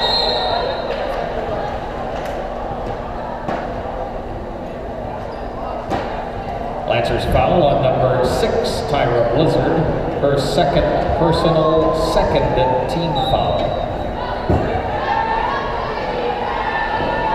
University, Windsor, ON, Canada - Women's Basketball Home Opener
Windsor Lancers Women's basketball home opener at the St. Denis center. I put my camera down and walked away. I think the sound really brings in the atmosphere of the game
6 November 2015